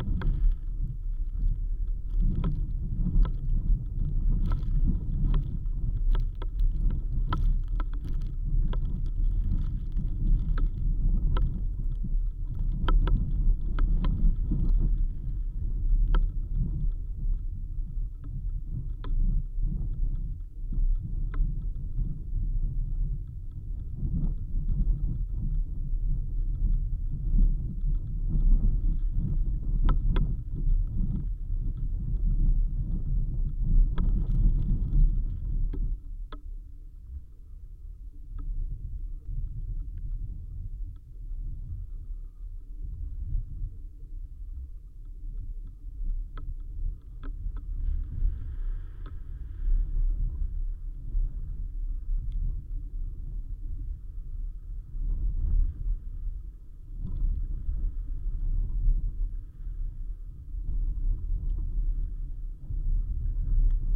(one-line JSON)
{"title": "Mospalomas dunes, Gran Canaria, a root in a sand", "date": "2017-01-29 13:40:00", "description": "contact misrophones placed on a root buried in a dune's sand", "latitude": "27.74", "longitude": "-15.59", "altitude": "119", "timezone": "GMT+1"}